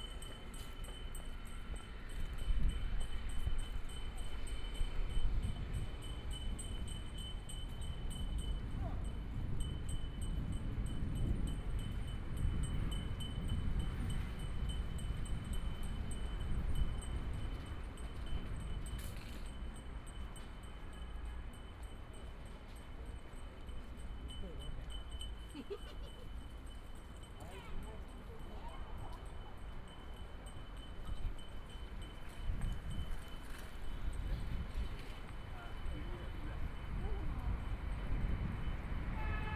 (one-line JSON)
{"title": "South Station Road, Shanghai - on the road", "date": "2013-11-27 12:54:00", "description": "Bells, Bells are the voice prompts from riding a bicycle to make recycling, Traffic Sound, Binaural recording, Zoom H6+ Soundman OKM II", "latitude": "31.21", "longitude": "121.49", "altitude": "11", "timezone": "Asia/Shanghai"}